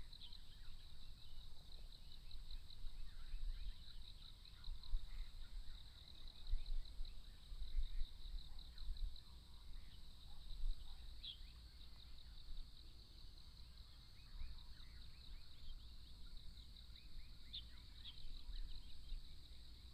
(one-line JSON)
{"title": "玉長公路, Fuli Township - Birds singing", "date": "2014-10-09 07:10:00", "description": "Birds singing, In the side of the road, Sound of insects, Traffic Sound", "latitude": "23.27", "longitude": "121.35", "altitude": "265", "timezone": "Asia/Taipei"}